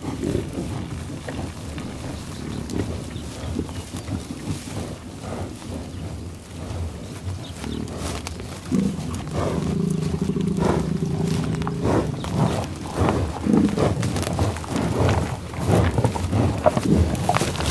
Tall Grass Prairie - Buffalos in the tall-grass prairie in Oklahoma, growling, grunting, sniffing and eating some food
At the end of the day, the buffalos came to eat some food the worker of the park gave to them with his truck. The bisons came really close to us.
Pawhuska, OK, USA, 10 May